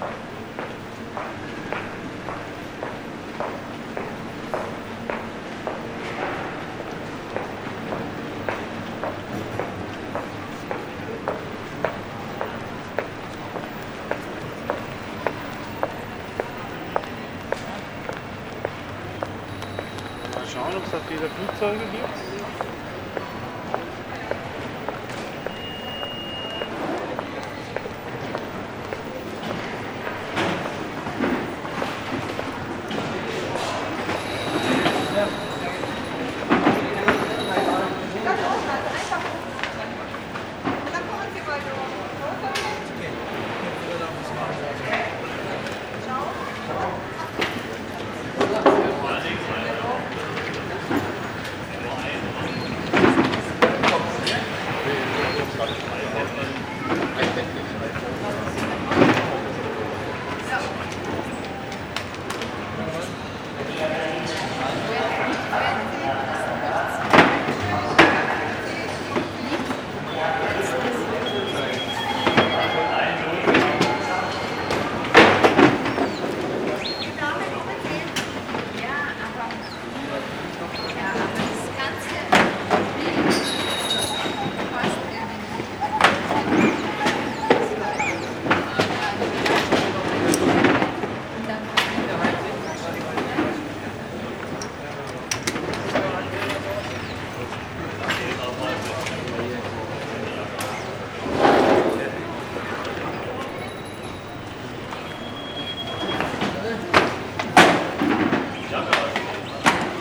Stuttgart Airport (STR), Flughafenstraße, Stuttgart, Germany - Stuttgart Airport main departure ambience & security.
The walk from check-in to over-sized luggage check-in, up onto the balcony above the main arrival hall and back through a small cafe into the line for security screening.